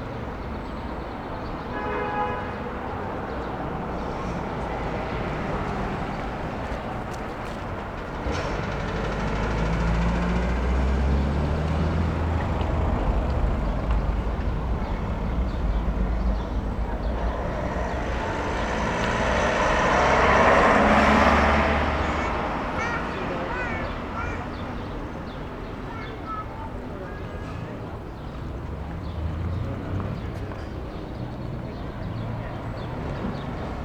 Berlin: Vermessungspunkt Friedelstraße / Maybachufer - Klangvermessung Kreuzkölln ::: 10.06.2011 ::: 18:59
10 June 2011, 18:59